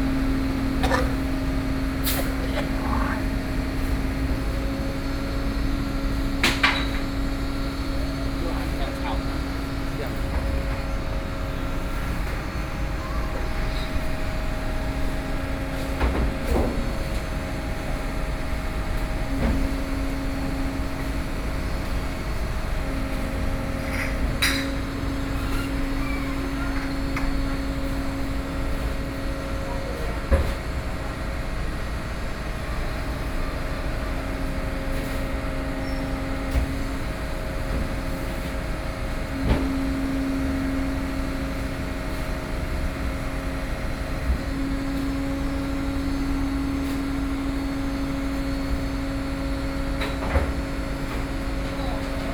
Changhua Station - The square next to the station
The square next to the station, Taxi driver rest area, Garbage truck arrived, Zoom H4n+ Soundman OKM II